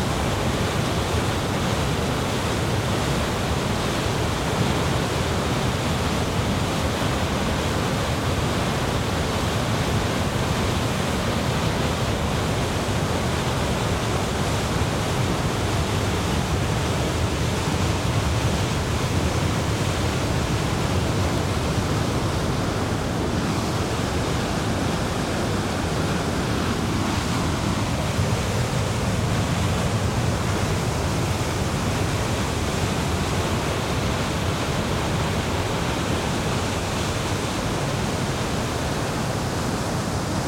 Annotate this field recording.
Tech note : Sony PCM-M10 internal microphones.